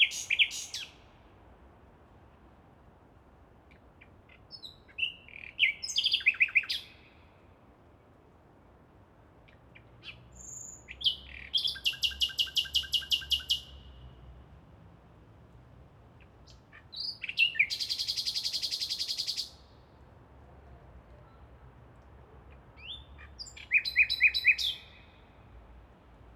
Berlin, Luisengärten - Kreuzberg nightingale
Berlin Kreuzberg, Luisengärten, nightingale. did not expect one here. this little gras land (former berlin wall area) will probably disappear soon because of a housing project.
Berlin, Germany, 15 May 2011, ~01:00